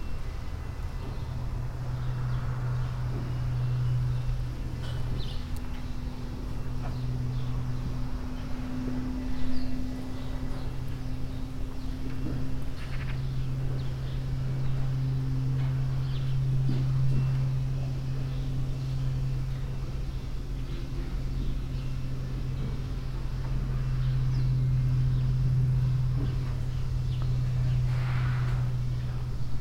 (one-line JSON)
{"title": "dorscheid, barn yard, cow shed", "date": "2011-09-17 17:09:00", "description": "At a big cow shed. The sound of spraying water, radio music, birds and the cows mooing and moving inside the shed. In the background the sound of a small airplane crossing the sky.\nDorscheid, Bauernhof, Kuhstall\nBei einem großen Kuhstall. Das Geräusch von spritzendem Wasser, Radiomusik, Vögel und die muhenden Kühe, die sich im Stall bewegen. Im Hintergrund das Geräusch von einem kleinen Flugzeug am Himmel.\nDorscheid, ferme, étable à vaches\nUne grande étable à vaches. Le bruit de l’eau qui gicle, de la musique à la radio, des oiseaux et les vaches qui meuglent en se déplaçant dans l’étable. Dans le fond, le bruit d’un petit avion traversant le ciel.", "latitude": "50.04", "longitude": "6.07", "altitude": "480", "timezone": "Europe/Luxembourg"}